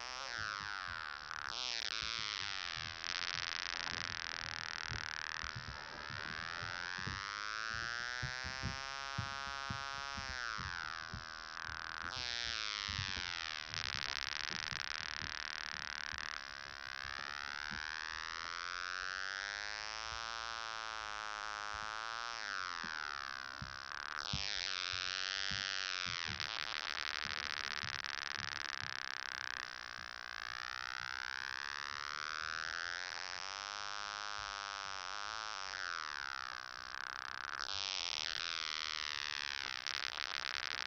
Water grass, Riga Botanical Gardens
Plant recording made for White Night, Riga 2011.
10 October 2011, 02:46